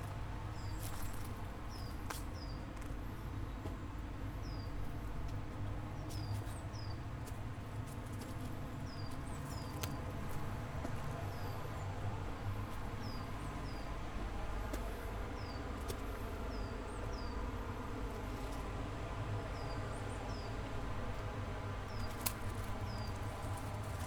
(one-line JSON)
{"title": "Mafamude, Portugal - Soundwalk, RTP", "date": "2014-12-06 13:30:00", "description": "Soundwalk in RTP, Porto.\nZoom H4n and Zoom H2\nCarlo Patrão & Miguel Picciochi", "latitude": "41.11", "longitude": "-8.60", "altitude": "199", "timezone": "Europe/Lisbon"}